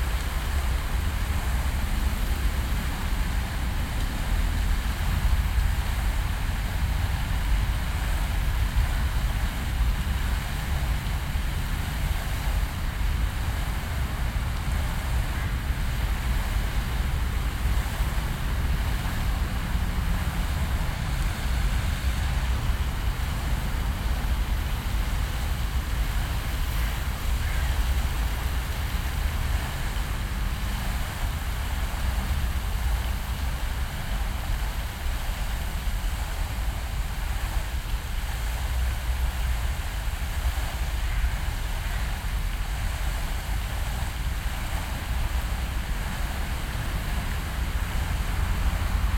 Düsseldorf, Hofgarten, Fontänenbrunnen
Rauschen des Fontänenbrunnens " de gröne jong" und das Rauschen des Verkehrs von der Hofgartenstrasse, an einem leicht windigen Nachmittag
soundmap nrw: topographic field recordings & social ambiences